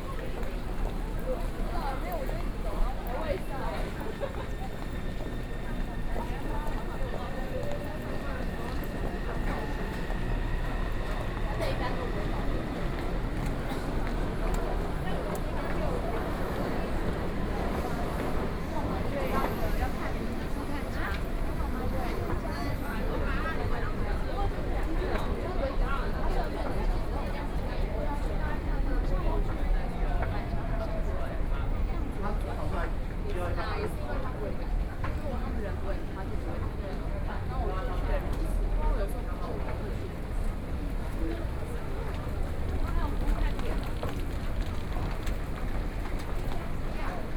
中正區黎明里, Taipei City - soundwalk
Starting from MRT station platform, Went outside the station
Binaural recordings, Sony PCM D100 + Soundman OKM II